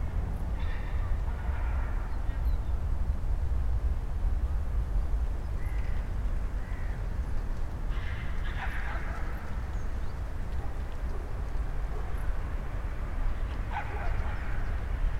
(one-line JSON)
{"title": "Mestni park, Maribor, Slovenia - echos and tramblings", "date": "2012-09-23 17:15:00", "description": "dog and doggy and their echos, aspen, sounds of young autumn", "latitude": "46.57", "longitude": "15.65", "altitude": "312", "timezone": "Europe/Ljubljana"}